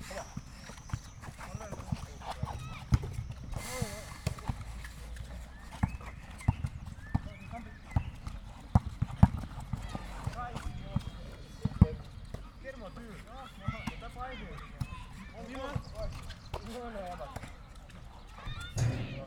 soccer and basketball fields near Maribor stadium, youngsters are playing basketball, nice sound of sports shoes on the wet ground.
(SD702 DPA4060)
Maribor, Slovenia